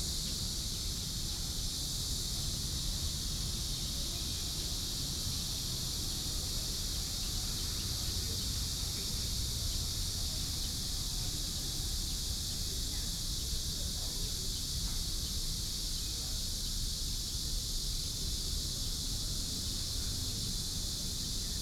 Sitting in the Park, Very hot weather, Traffic Sound, Birdsong, Cicadas sound
Sony PCM D50+ Soundman OKM II